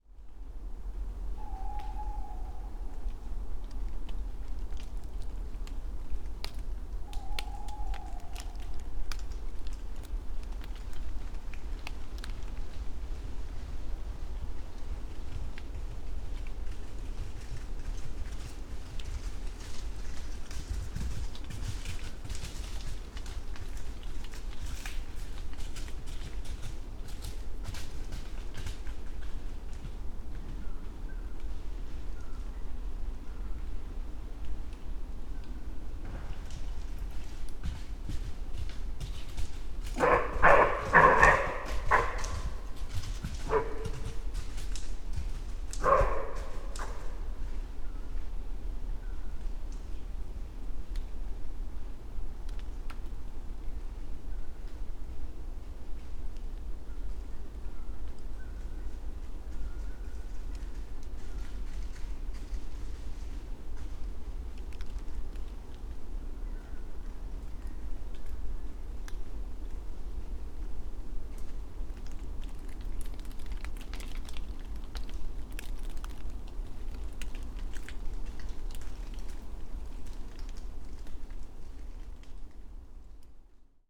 Raindrops from leaves, an owl, footsteps coming close, something´s barking close by - i doubt it´s a dog - could it be a deer?
overnight recording with SD Mixpre II and Lewitt 540s in NOS setup
Mecklenburg-Vorpommern, Deutschland